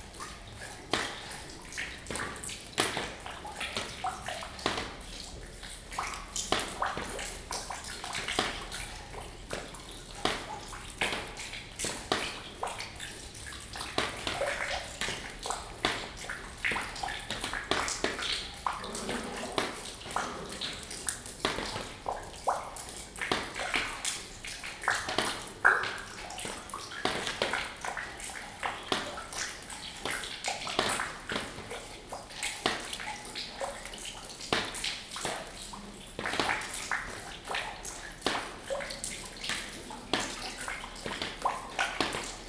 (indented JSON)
{
  "title": "Nida, Lithuania - Abandoned Hotel",
  "date": "2016-08-01 20:38:00",
  "description": "Recordist: Raimonda Diskaitė\nDescription: Inside an empty, defunct hotel on a rainy day. Water drops falling into an empty bucket and on the floor. Recorded with ZOOM H2N Handy Recorder.",
  "latitude": "55.31",
  "longitude": "21.00",
  "altitude": "19",
  "timezone": "Europe/Vilnius"
}